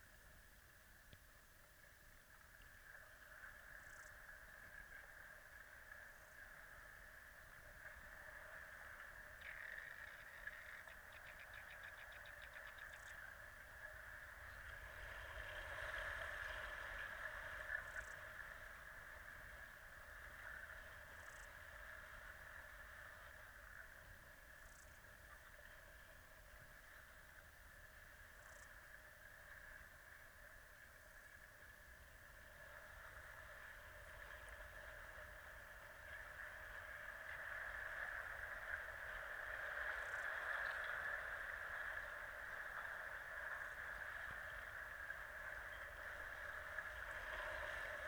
Royal National Park, NSW, Australia - (Spring) Inside The Entrance To Marley Lagoon
A very quiet underwater soundscape at the beginning of Marley Lagoon, I'm sure I would get a lot more sounds if I was able to get deeper into the lagoon. I recorded in this spot nearly a year ago and the sounds are very similar.
Two JrF hydrophones (d-series) into a Tascam DR-680
Wollongong NSW, Australia, 24 September 2014, 15:45